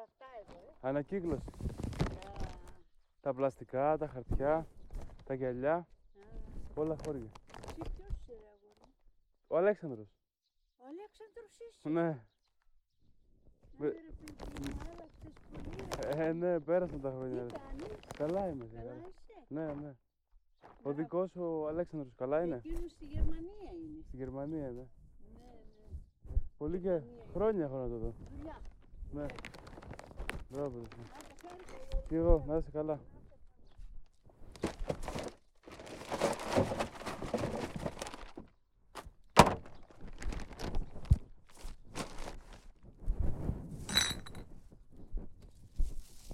Antigonos, Greece - Talking to an old woman